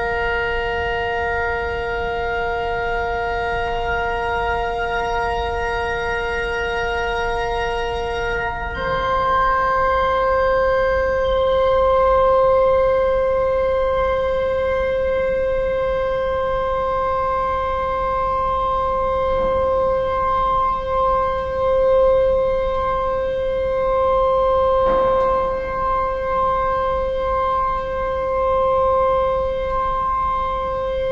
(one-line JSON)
{"title": "dortmund, reinoldi church, main church hall - dortmund, reinoldikirche, organ tuning 02", "description": "inside the main church hall - tuning of the organ part 02\nsoundmap nrw - social ambiences and topographic field recordings", "latitude": "51.51", "longitude": "7.47", "altitude": "96", "timezone": "Europe/Berlin"}